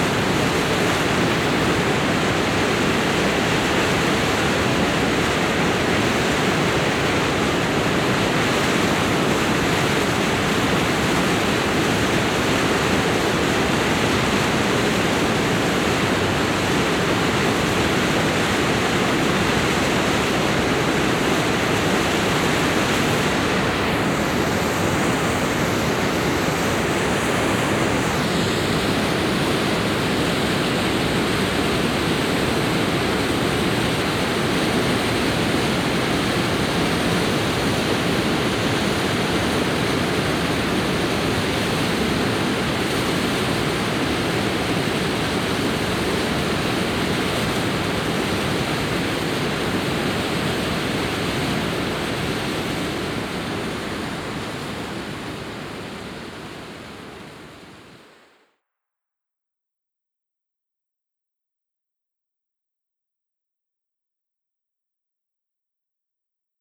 Auf dem Damm des Wasserkraftwerks des Ruhrverbandes. Das Rauschen des Wassers aus den Turbinen.
On the dam of the water power station. The sound of the water coming out of the turbines.
Projekt - Stadtklang//: Hörorte - topographic field recordings and social ambiences
Essen, Germany, 13 April 2014, 10:47